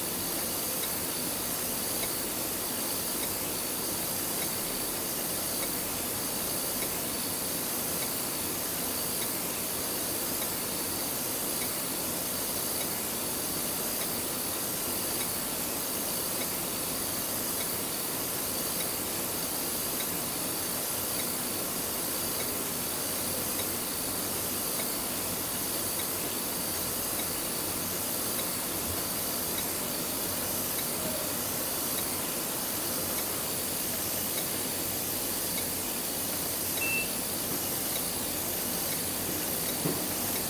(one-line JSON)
{"title": "Williams Press, Maidenhead, Windsor and Maidenhead, UK - The sound of the KNITSONIK Stranded Colourwork Sourcebook covers being printed", "date": "2014-10-02 14:23:00", "description": "This is the sound of the covers of the KNITSONIK Stranded Colourwork Sourcebook on the press at Williams Press, Berkshire. The sound was recorded with my EDIROL R-09 sitting underneath the out-tray of a giant Heidelberg Speedmaster.", "latitude": "51.53", "longitude": "-0.73", "altitude": "30", "timezone": "Europe/London"}